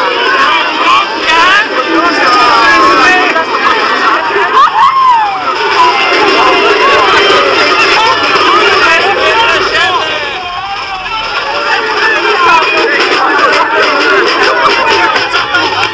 f.cavaliere, monteveglio festa della saracca